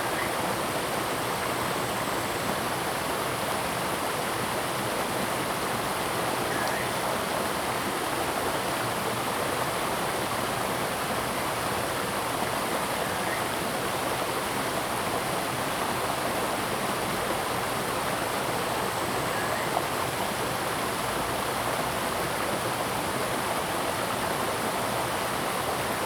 Maopukeng River, 埔里鎮桃米里 - Stream and Birds
Stream and Birds
Zoom H2n MS+XY
Puli Township, 桃米巷11-3號, 7 October 2015, ~06:00